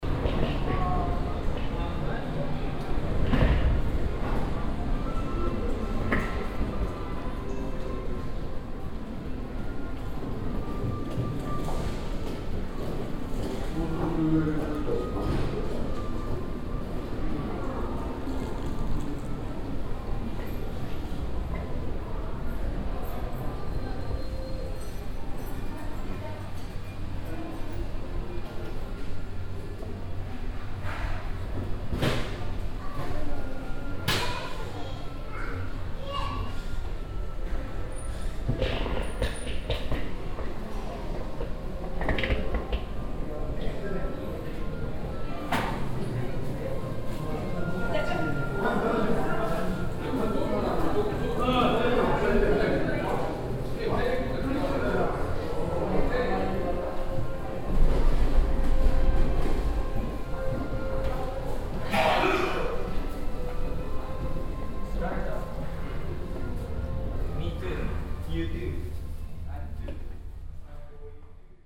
yokohama, city air terminal
At the city air terminal. Entering the building from the bus station side. Some classical kind of background muzak in the basement shop area.
international city scapes - topographic field recordings and social ambiences